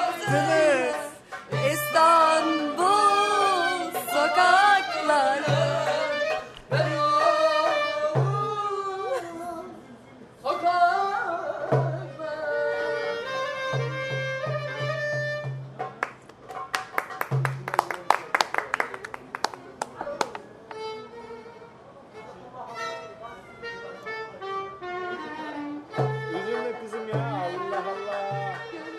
Taksim, singing the songs
Winter is coming in in Istanbul and Taksims famousroof top terrace are not as crowded anymore. Thus we get the chance to actually listen to the songs of the musicians still wandering from bar to bar. Whoever is inebriate and excitable enough stands up and accompanies the singing. Maybe also those, that don understand a word, the foreigner, yabanci.